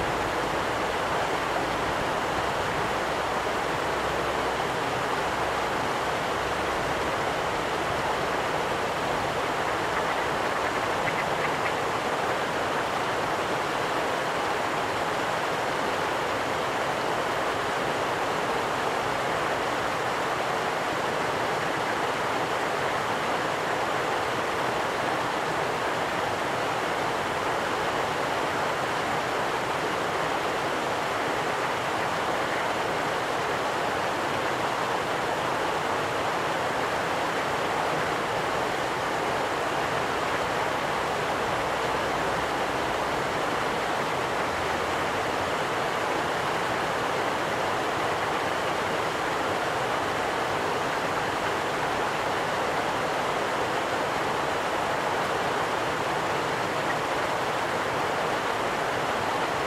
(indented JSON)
{
  "title": "Первомайский пр., Петрозаводск, Респ. Карелия, Россия - On the bridge over the river Neglinka",
  "date": "2020-02-13 13:10:00",
  "description": "On the bridge over the river Neglinka. You can hear the water rushing and the ducks quacking. Day. Warm winter.",
  "latitude": "61.79",
  "longitude": "34.35",
  "altitude": "73",
  "timezone": "Europe/Moscow"
}